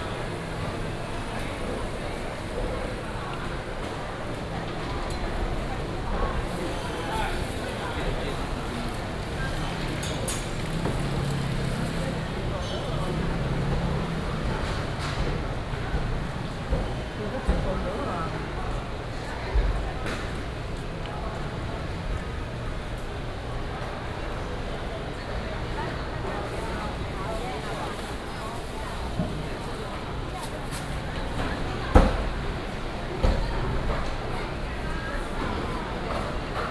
新建國市場, Taichung City - New large market
Walking through the market